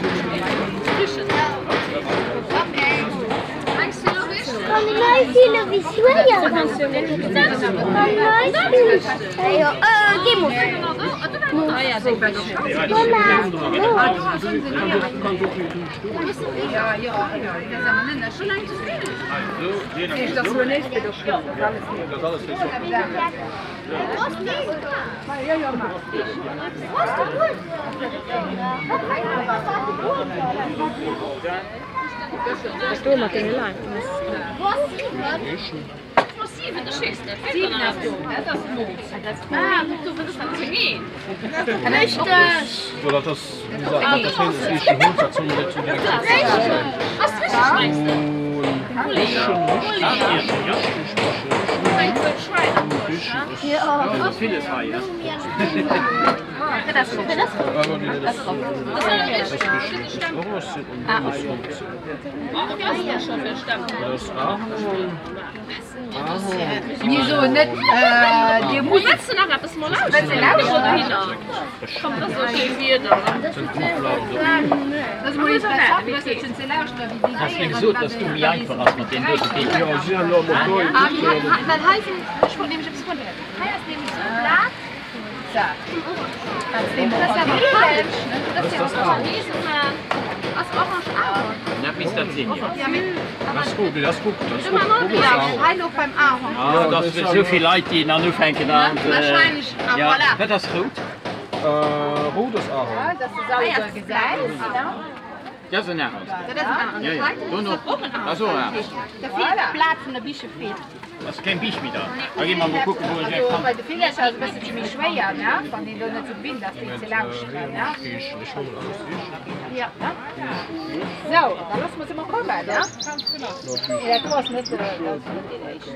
AAuf dem Sommer-Familienfest des Naturpark Hauses an einem Hör- Fühl und Riechstand.
Der Klang von Stimmen und Klangbeispielen. Im Hintergrund Hammergeräusche vom Schmiedestand.
At the summer family fair of the nature park house at a listen, feel and hear tent. The sound of voices and sound examples. In the background hammer sounds from the forging stand.
Hosingen, Luxembourg